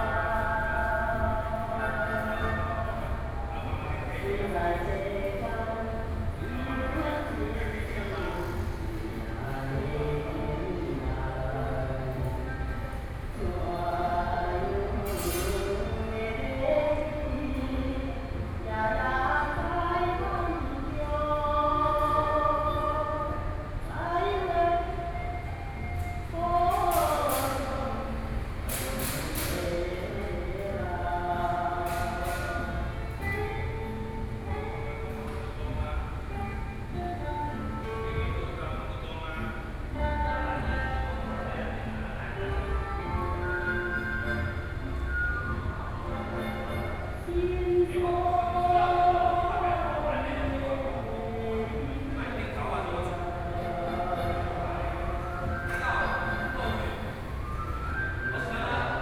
In the gallery, Workers are repairing the door, Artists are repairing his artworks, Sony PCM D50 + Soundman OKM II